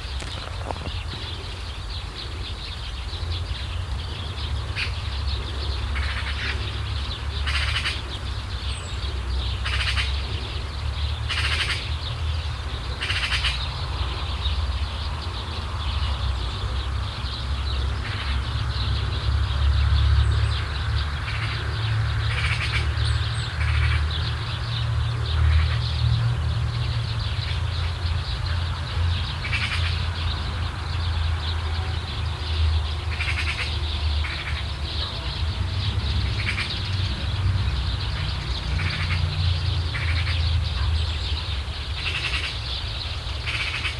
a group of birds occupying a bunch of bushes nearby.
Poznan, Poland, 1 November 2014